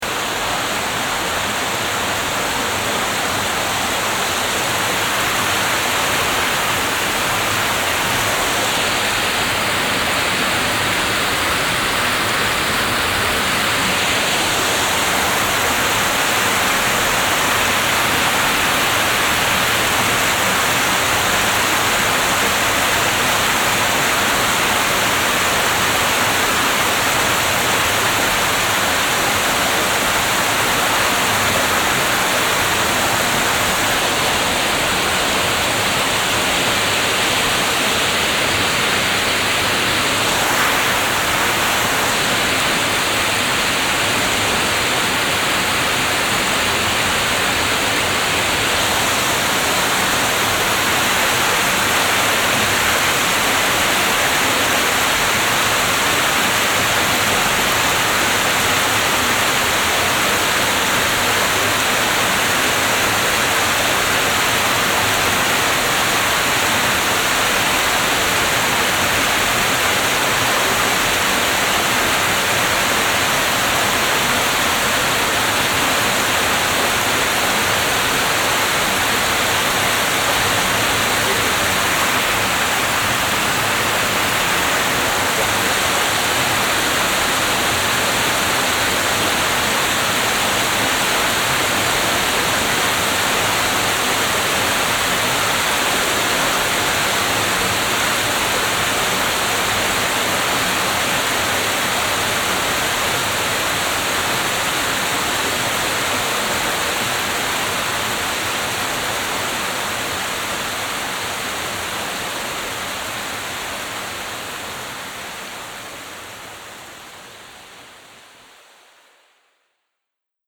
At the water driven wood saw house, which is now turned into a museum. The sound of an outdoor water wheel.
soundmap d - social ambiences, water sounds and topographic feld recordings